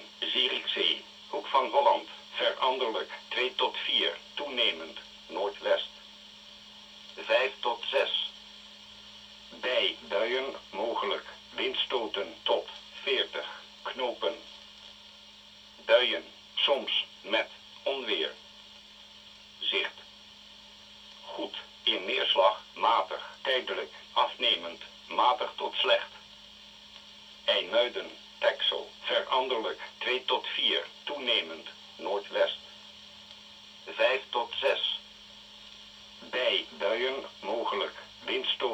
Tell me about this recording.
listening to the wheather forecast of the netherlands coastguard at 19 p.m. the city, the country & me: june 28, 2011